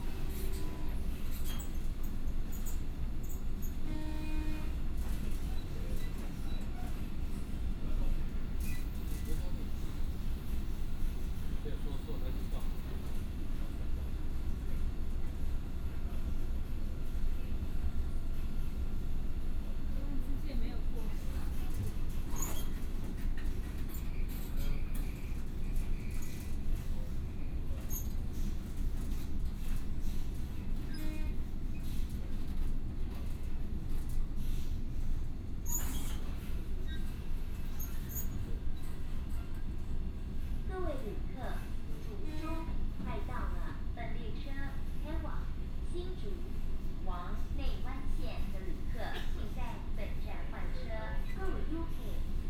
Zhubei City, Hsinchu County - In the compartment
In the compartment, from Liujia Station to Zhuzhong Station, Train message broadcast